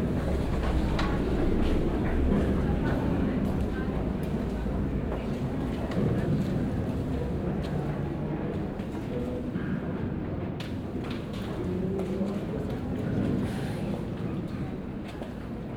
Neuss, Deutschland - museums island hombroich, rocket station, abraham building
Inside the cellar of the Abraham building at the museums island hombroich. The sound of video installations under the title. Abandoned City presented by the Julia Stoschek collection here during the Quadrifinale plus voices of the visitors.
soundmap d - social ambiences, topographic field recordings and art spaces
Neuss, Germany